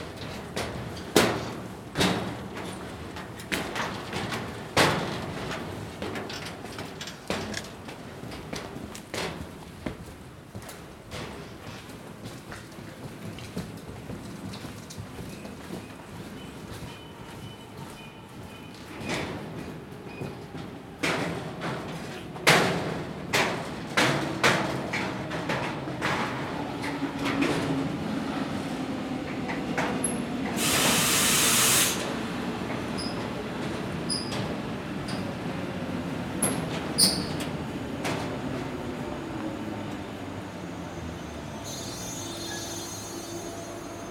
S-Bahnhof mit Baustellenstahltreppe Zoom H4n, ProTools
Eichwalde, Germany